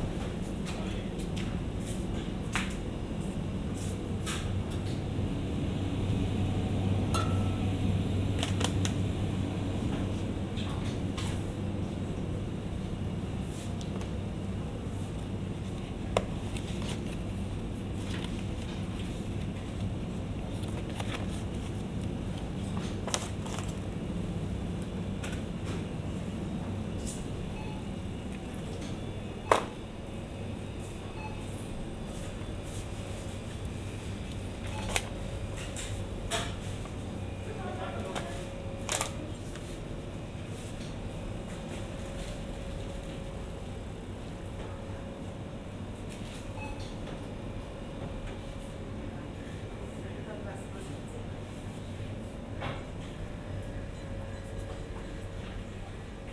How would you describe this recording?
ambience within the Bio Company eco supermarket, (Sennheiser Ambeo Headset, ifon SE)